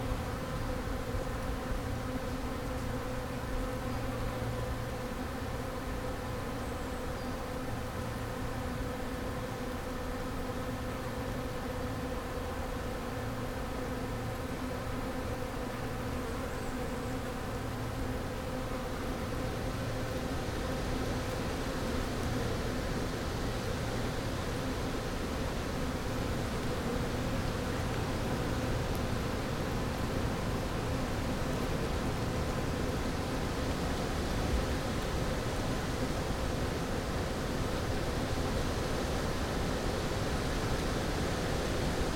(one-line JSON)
{"title": "Ruše, Slovenija - flies", "date": "2012-07-08 15:00:00", "description": "flies in the woods", "latitude": "46.50", "longitude": "15.44", "altitude": "1020", "timezone": "Europe/Ljubljana"}